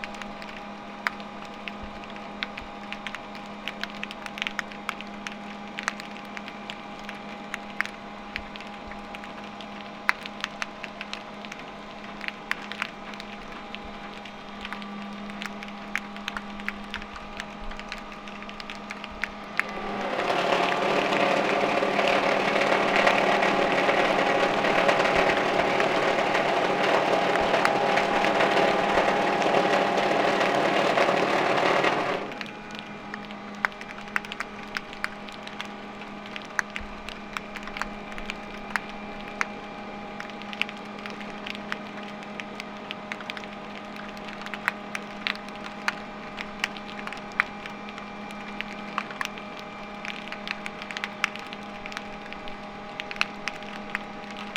Valparaíso, Valparaíso Region, Chile - Snapping shrimp and motors in Muelle Prat